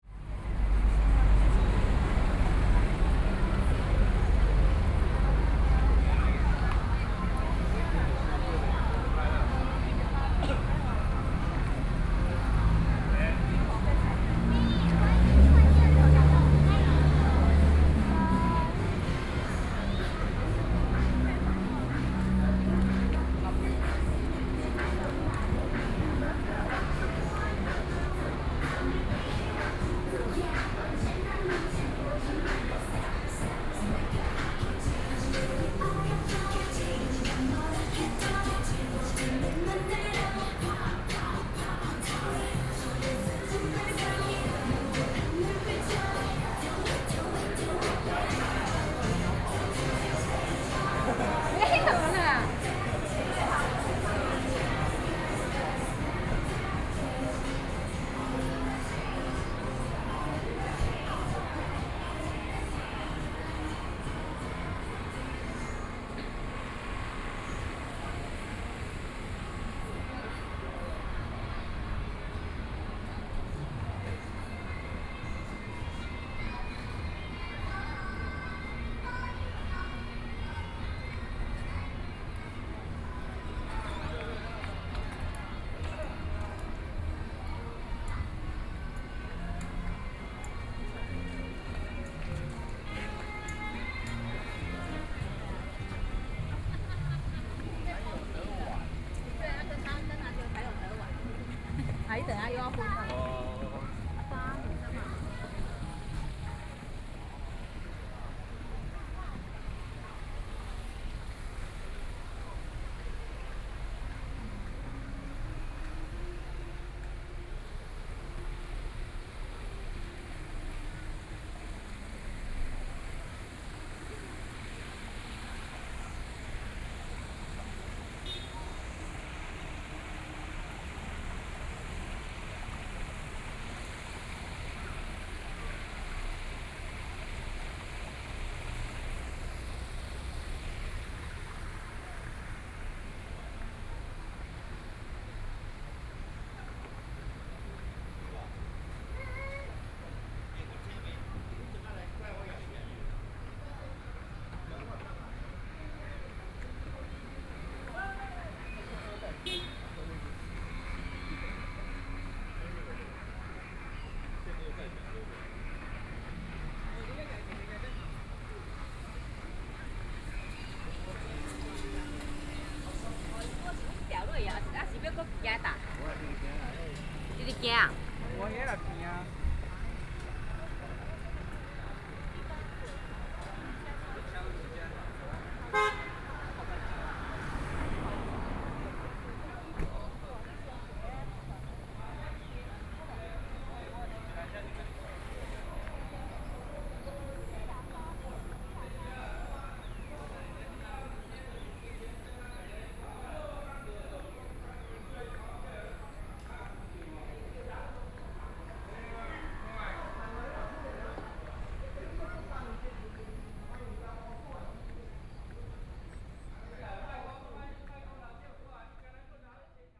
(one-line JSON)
{"title": "湯圍溝溫泉公園, Jiaosi Township - Hot Springs Park", "date": "2014-07-21 19:12:00", "description": "Walking through the park in Hot Springs\nSony PCM D50+ Soundman OKM II", "latitude": "24.83", "longitude": "121.77", "altitude": "15", "timezone": "Asia/Taipei"}